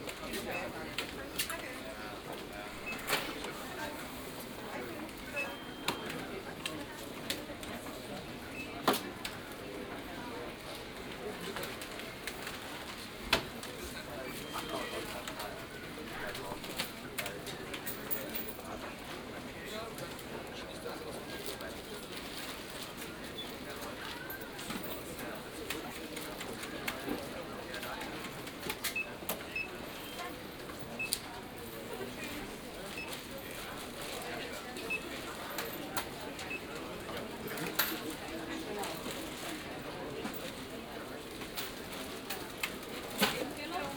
Berlin, Friedrichstr., bookstore - christmas bookstore
a year later...